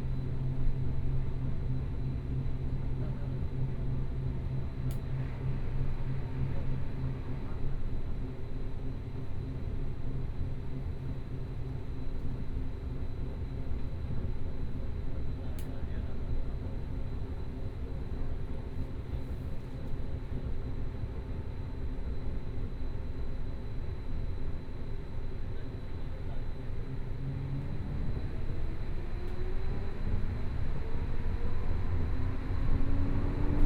Neihu Line, Taipei City - Compartments in the MRT
from Dazhi station to Zhongshan Junior High School station
Binaural recordings, Please turn up the volume a little
Zoom H4n+ Soundman OKM II